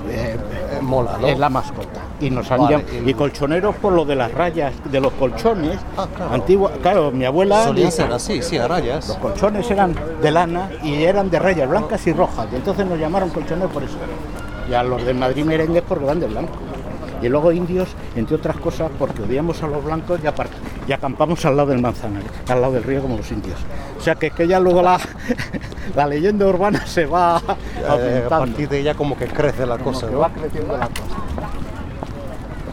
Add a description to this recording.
Pacífico Puente Abierto - Calle Cocheras. Hablando con Alejandro de radios y de fútbol